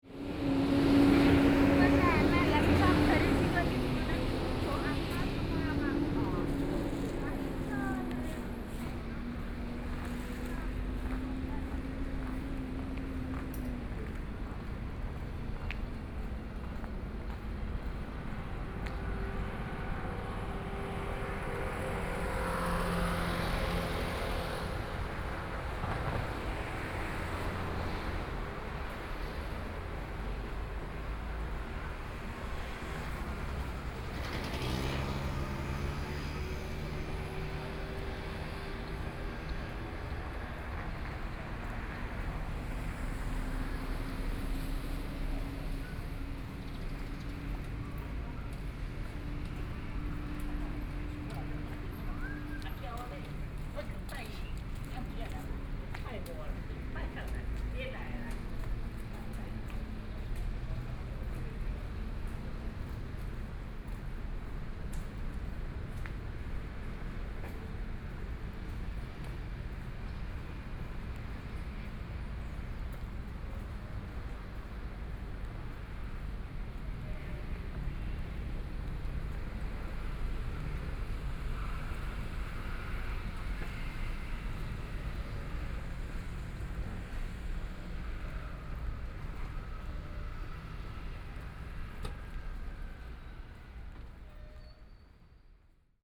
Binhai 2nd Rd., Gushan Dist. - walking on the Road
walking on the Road, Traffic Sound
Sony PCM D50+ Soundman OKM II